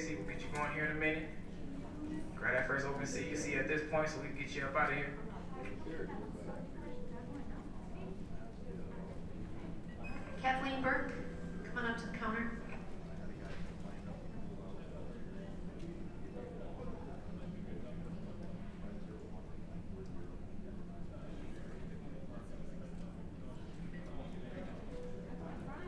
MSP Airport Terminal 2 - Terminal 2 Gate H12
The sounds of Gate H12 in Terminal 2 at the Minneapolis St Paul International Airport